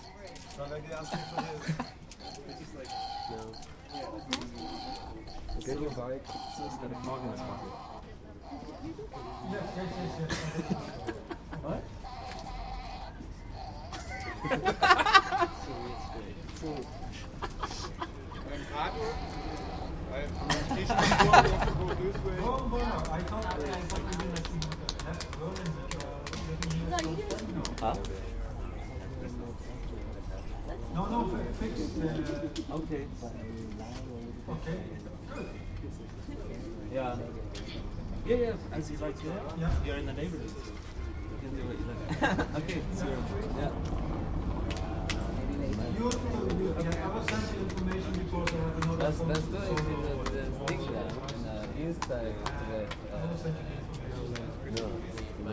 dkfrf @ mittenmang
30.05.2008: dkfrf / das kleine field recording festival @ mittenmang, corner friedel-/lenaustr. relaxed atmoshpere before the concert, funny sounds. performances by Alessandro Bosetti, Soichiro Mitsuya, Michael Northam, Ben Owen
Berlin